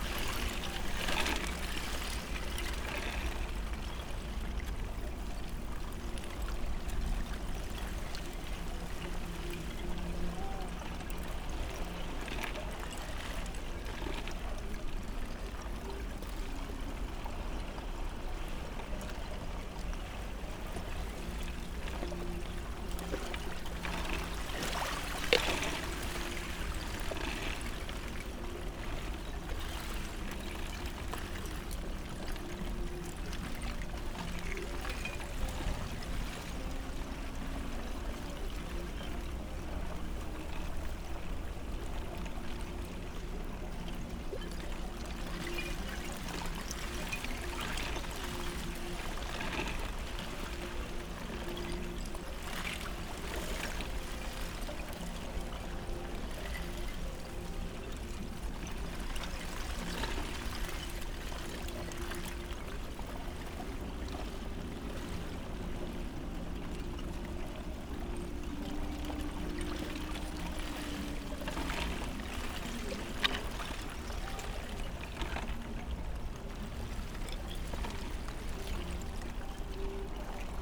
공지천 얼음 끝에서 floating ice edge ２１年１２月３１日
공지천 얼음 끝에서_floating ice edge_２１年１２月３１日